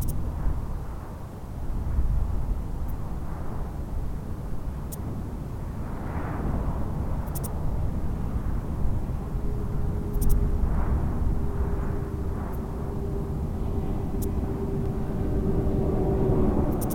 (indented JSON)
{
  "title": "Lacey Ln, Olancha, CA, USA - Owens Lake Ambience: Planes, Insects, Traffic",
  "date": "2014-09-14 13:00:00",
  "description": "Metabolic Studio Sonic Division Archives:\nOwens Lake Ambience. Sounds of low flying aircraft, insects and traffic from Highway 395. Recorded on Zoom H4N",
  "latitude": "36.29",
  "longitude": "-118.02",
  "altitude": "1118",
  "timezone": "America/Los_Angeles"
}